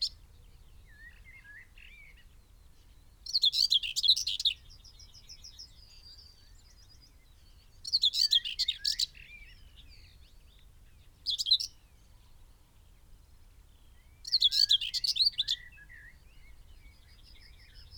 Malton, UK - whitethroat song soundscape ...
whitethroat song soundscape ... dpa 4060s clipped to bag to zoom h5 ... bird calls ... song ... from chaffinch ... wood pigeon ... linnet ... wren ... chaffinch ... crow ... blackbird ... song thrush ... skylark ... pheasant ... yellowhammer ... extended time edited unattended recording ... bird often moves away visiting other song posts ... occasionally its song flight can be heard ...
Yorkshire and the Humber, England, United Kingdom, 30 May, 05:00